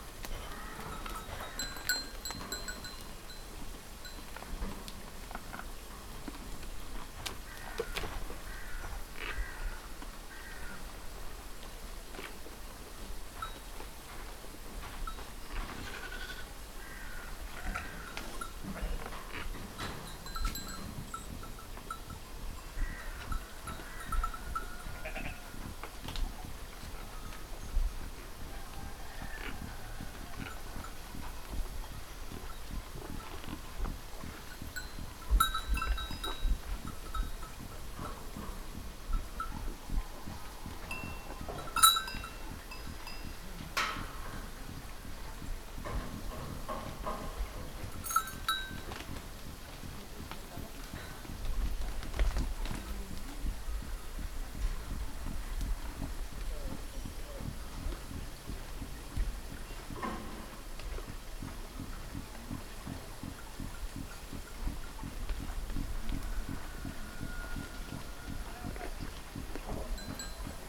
Crete, Samaria Gorge - sheep afternoon
a herd of sheep grazing in the scorching afternoon